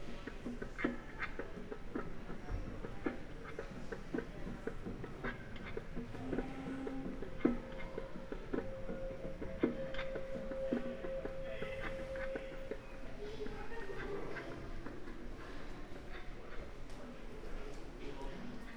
Am Schokoladenmuseum, Köln - exhibition room
tiny sound installation at the exhibition room
(Sony PCM D50, Primo EM172)
Köln, Germany, 17 July 2017